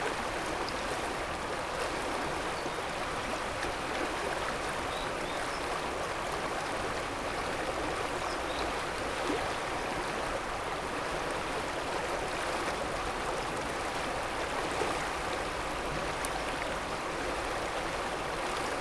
river Memele near Bauskas castle